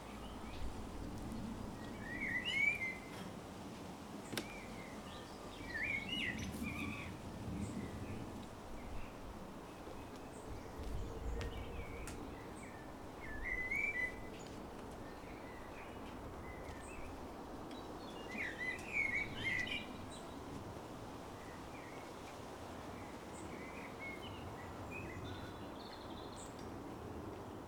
Highgate, London, UK - Highgate Allotments - Plot E1
Birdsong on the Allotment - A1 and North Hill traffic in the background. Recorded using an Audio Technica AT8022 into a Zoom H4